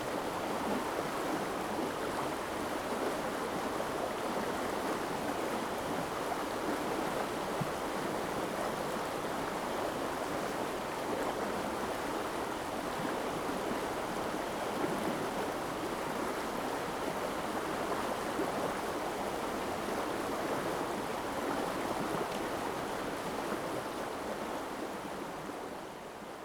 Guanshan Township, Taiwan - Stream
Cicadas sound, Traffic Sound, Stream, Very hot weather
Zoom H2n MS+ XY
Taitung County, Taiwan